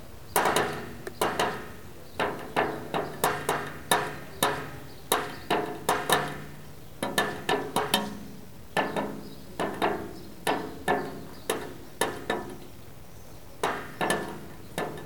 Rue Sainte-Ursule, Toulouse, France - after the rain
after the rain, a drop of water falling on a metal plate
traffic background
captation : Zoom h4n